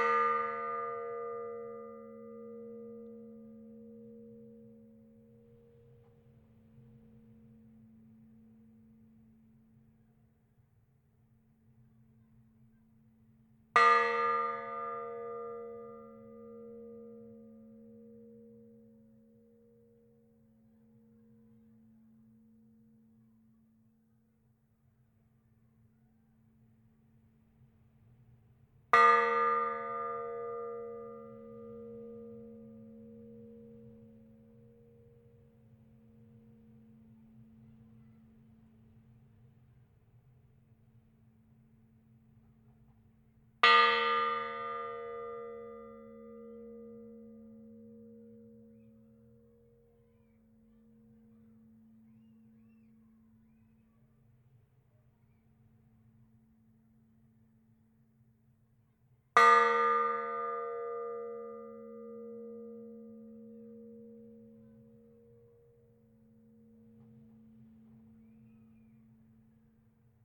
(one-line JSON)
{"title": "Rue du Maréchal Foch, Brillon, France - Brillon (Nord) - église St-Armand", "date": "2021-03-15 14:00:00", "description": "Brillon (Nord)\néglise St-Armand\nTintement cloche grave", "latitude": "50.44", "longitude": "3.33", "altitude": "20", "timezone": "Europe/Paris"}